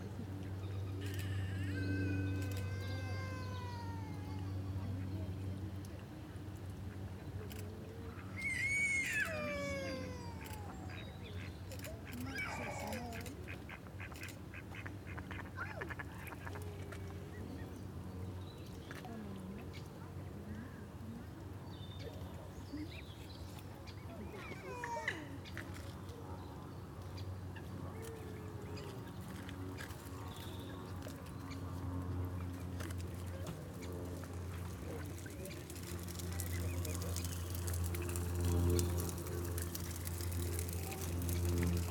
Whiteknights Lake, Reading, UK - The public welcoming the arrival of six beautiful cygnets
This is the sound of six cygnets, recently born to a pair of swans whose nest is up in the top lake. Their tiny sounds and beautiful little fluffy bodies hold huge appeal for everyone who is excited about the arrival of spring. Many people were taking photos of the swans and stopping to admire the little family. Recorded with a pair of Naiant X-X microphones.